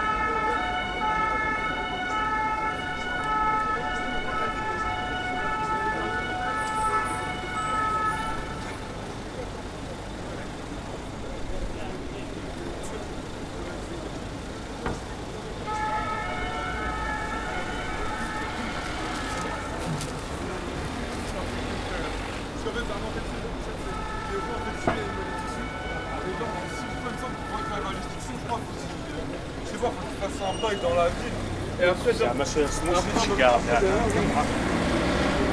Bd Félix Faure, Saint-Denis, France - Corner of Bld Félix Faure & R. Gabriel Péri
This recording is one of a series of recording mapping the changing soundscape of Saint-Denis (Recorded with the internal microphones of a Tascam DR-40).